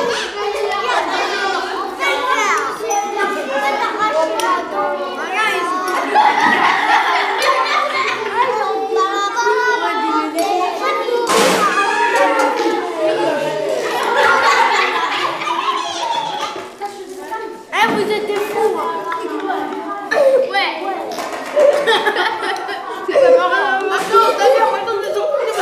Saint-Maurice-lès-Charencey, France - Cantine de l'école
Ambiance à la cantine de l'école de Saint Maurice les Charencey, Zoom H6, micros Neumann
7 February 2014, 12:00